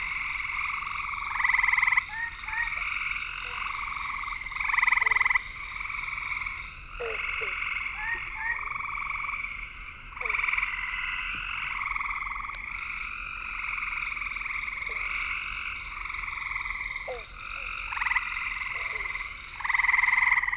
Downe, NJ, USA - bear swamp frogs
several frog species call from a roadside bog